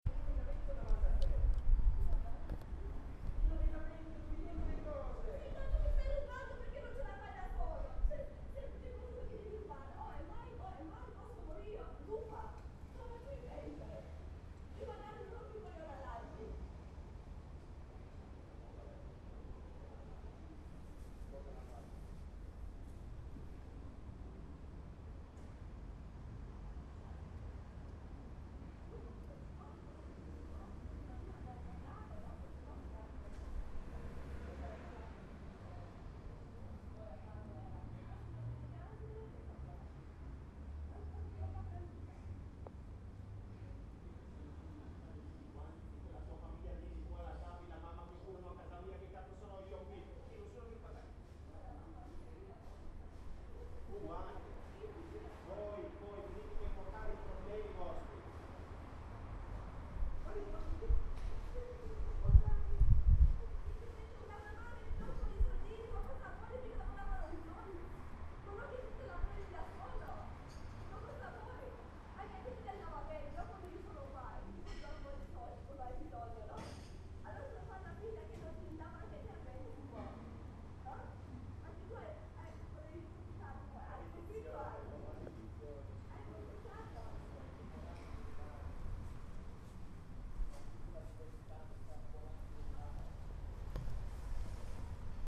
There's nothing more full of energy and passion than an Italian couple arguing. You can even hear and feel the dynamic on the street.